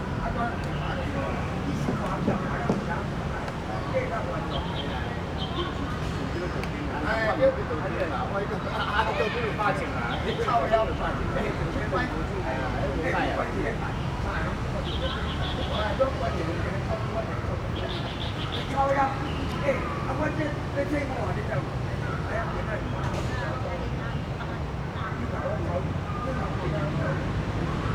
Zhongxiao Rd., Sanchong Dist., New Taipei City - in the Park
in the Park, Traffic Noise, Aircraft flying through
Rode NT4+Zoom H4n
New Taipei City, Taiwan, March 2012